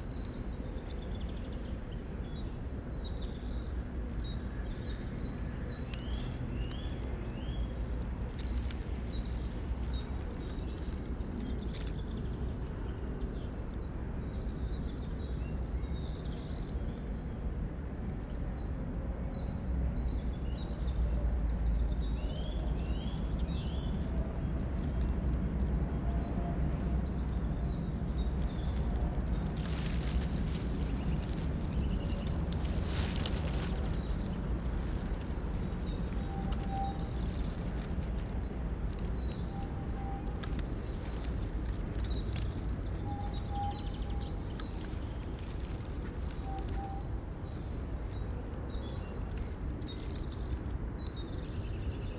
Braník woodland, a stormy night, rain and wind, Nad Údolím, Praha, Czechia - Dawn, first light, first birds
Also recorded from the continuous stream. The rain has stopped but the gusty wind continues. Traffic is still the background drone. Planes fly above. The birds sound distant, but a nuthatch and great tits are calling. Later robins, a blackbird, chaffinch and chiffchaff sing. Tram wheels squealing from the valley below create a high-pitched tone. A freight train rumbles past on the track very close to the microphones.
Praha, Česko, April 2022